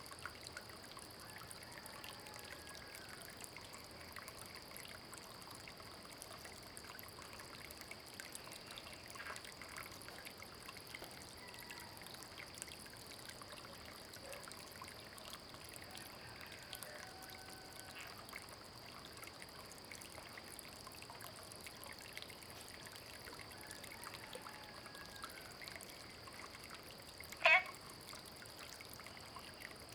Green House Hostel, Puli Township - Early morning
Frog calls, Small ecological pool, Early morning, Chicken sounds
Zoom H2n MS+XY
Puli Township, Nantou County, Taiwan